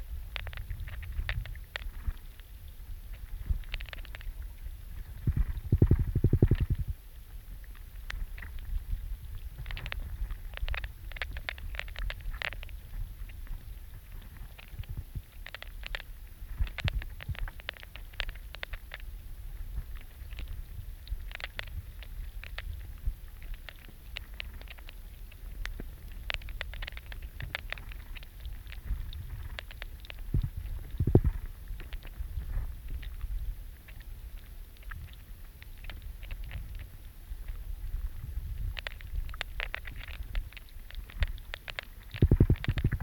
river Sventoji. hydrophone at the abandoned watermill
Utenos apskritis, Lietuva, 5 April 2020